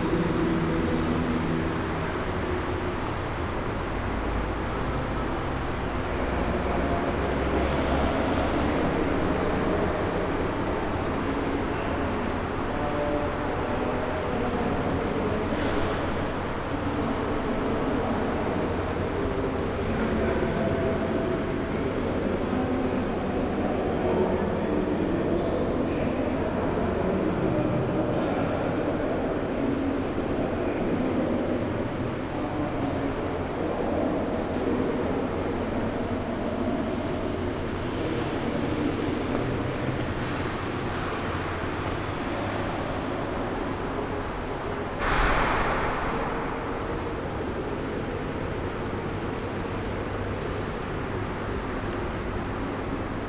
essen, old synagogue, main hall
Inside the main hall of the former Essen synagogue, - now museum for jewish culture- the ambience of the place is filled by the outside traffic noise. Within this you can slightly recognize some jewish music texture that is a kind of sound installation in the hall.
Projekt - Stadtklang//: Hörorte - topographic field recordings and social ambiences